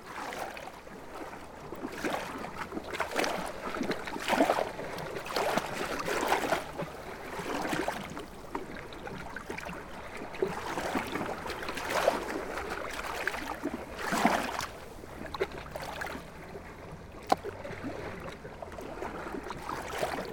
Kaunas, Lithuania, Kaunas lagoon
Windy day, I found some calm place for my mics amongst stones...
Kauno apskritis, Lietuva, 19 August 2021, ~14:00